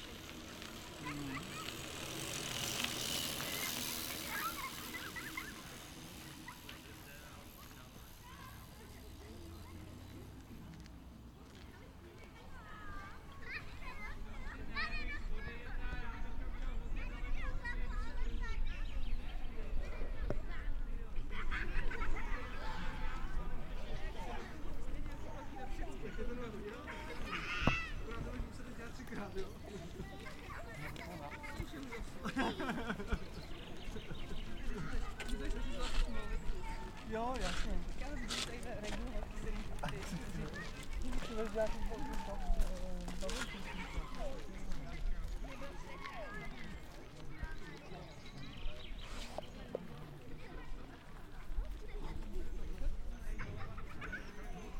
Nedělní rušné odpoledne na Skalce s oblíbeným bufetem. Skala je poutní místo nad Mníškem s barokním kostelíkem sv. Máří Magdaleny, bývalým klášterem, poustevnou a křížovou cestou, kam jezdí často výletnící z Prahy i z okolí. Kdysi tudy vedla Zlatá stezka, v zimě sem jezdí běžkaři z hřebenové červené trasy po hřebenech Brd. Z Řevnic sem vede historicky třetí nejstarší turistická značená cesta Klubu českých turistů v Čechách.